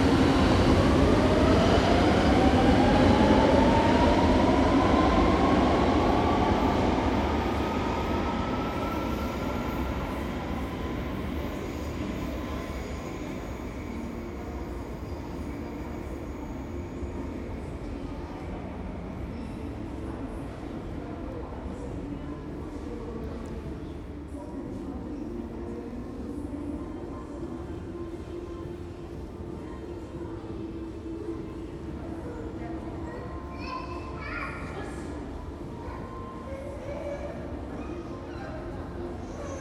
Inside Metro Station Jaques Brel, Anderlecht/Belgium - Inside Metro Station Jaques Brel
The Ambiance of Metro Station Jacques Brel: People, a child crying along the Muzak, Metros coming through the tunnell, trains passing by above.
15 October 2016